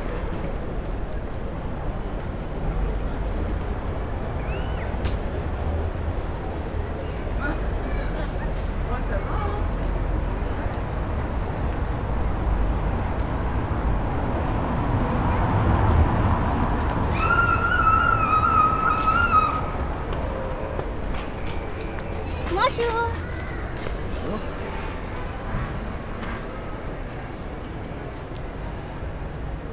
Brussels, Parvis de Saint-Gilles.
Crowd, ambulance, and a homeless man asking me what I'm doing.